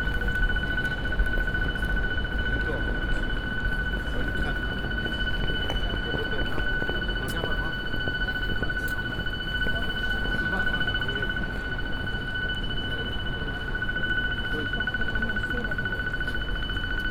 Gare de Flandres, Lille, Francja - (411) BI Railway station
Binaural recording of a Gare de Flandres on Sunday morning. Ultimate readymade - Duchamp would be proud.
Sony PCM-D100, Soundman OKM
France métropolitaine, France, 18 November 2018